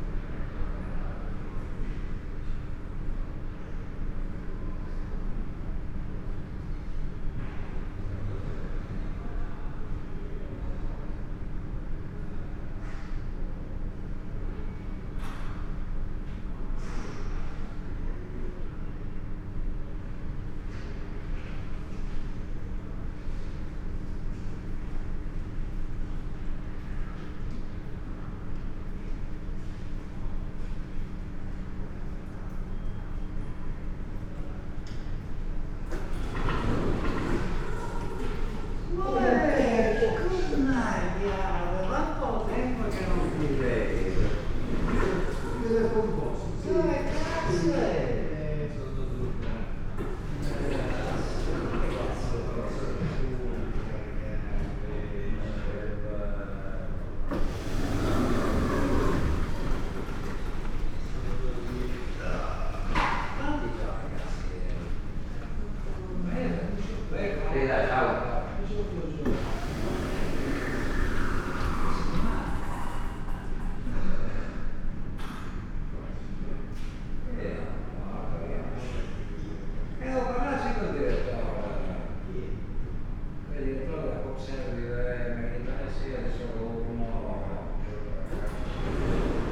Ospedale di Cattinara, Trieste, Italy - corridor, emergency department
slide gates, spoken words, beds on wheels, steps ...
Università degli Studi di Trieste, Trieste, Italy, 10 September 2013